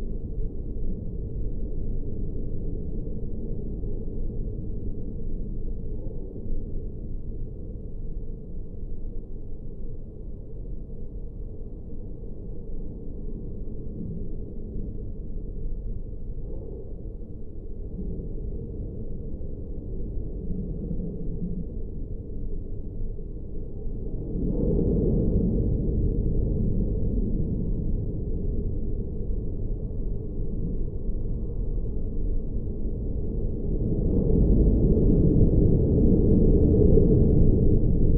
Aglona, Latvia, metallic construction at basilica
the abandoned metallic stage construction at Aglona's basilica. The stage was used at Pope's visit. Geophone recording in windy day...